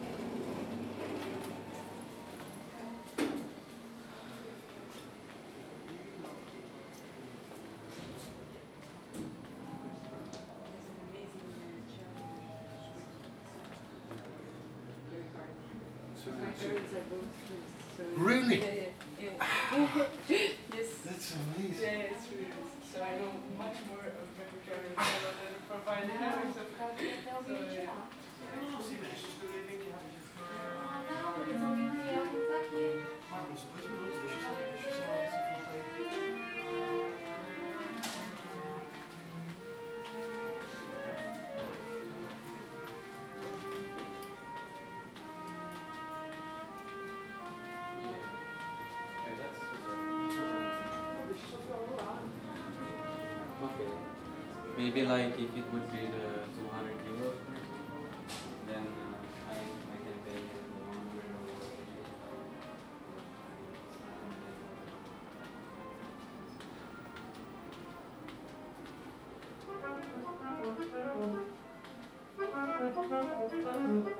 recorded and created by Marike Van Dijk